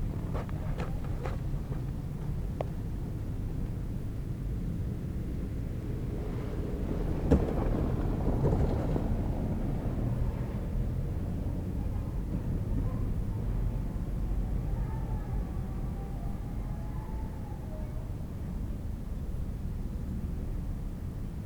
Berlin: Vermessungspunkt Friedel- / Pflügerstraße - Klangvermessung Kreuzkölln ::: 29.12.2010 ::: 16:37
29 December, ~17:00